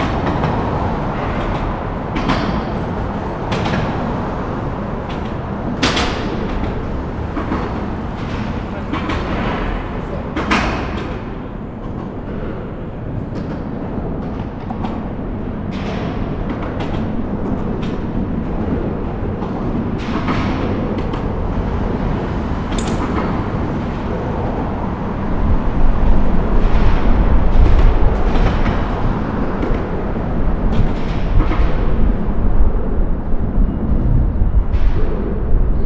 Downtown Chicago, River bridge under lakeshore, cars, loud, industrial
Illinois, United States of America